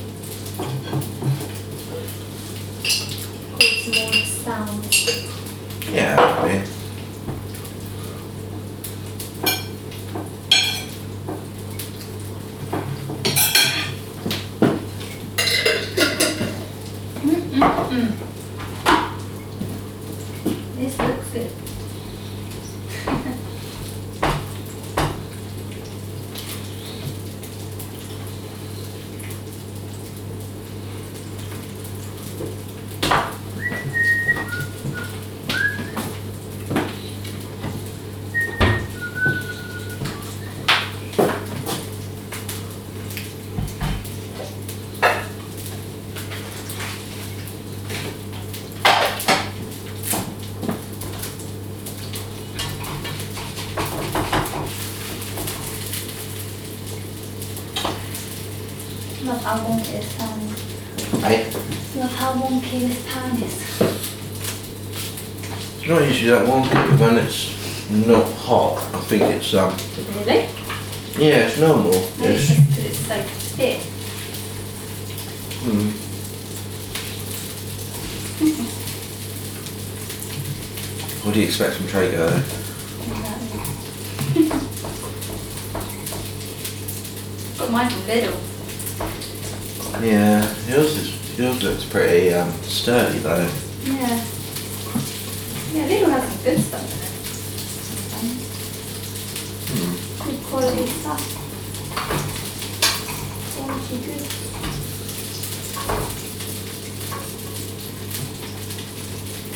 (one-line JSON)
{"title": "Penryn, Cornwall, UK - Breakfast at St Gluvias st", "date": "2013-02-27 13:12:00", "description": "Sittin in our kitchen, cooking Sausage n egg Sandwiches mmmmm", "latitude": "50.17", "longitude": "-5.10", "altitude": "9", "timezone": "Europe/London"}